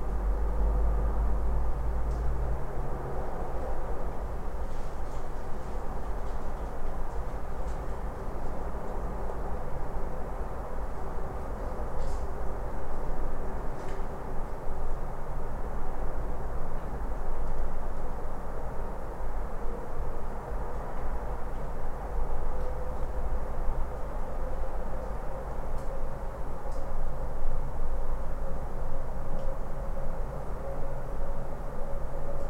Utena, Lithuania, abandoned building
abandoned soviet military base, buildings with no windows...listening to the distant hum of a city